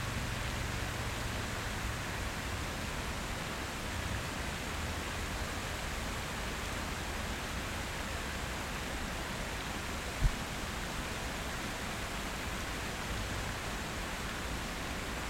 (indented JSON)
{
  "title": "Piata Unirii, Bucharest, Romania - Fountain in the daytime",
  "date": "2019-09-27 11:44:00",
  "description": "Sitting on a bench, recording the fountain and people passing with the XY microphone of a Zoom H6.",
  "latitude": "44.43",
  "longitude": "26.10",
  "altitude": "68",
  "timezone": "Europe/Bucharest"
}